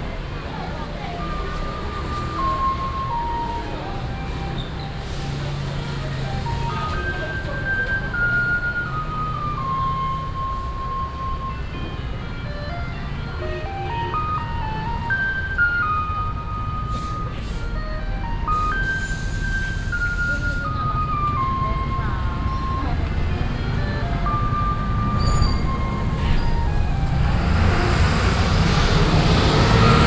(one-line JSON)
{"title": "Dazhong St., Tamsui Dist., New Taipei City - Walking on the road", "date": "2016-04-07 17:51:00", "description": "Walking on the road, Traffic Sound, Garbage trucks, Go into the Sunset Market", "latitude": "25.18", "longitude": "121.45", "altitude": "46", "timezone": "Asia/Taipei"}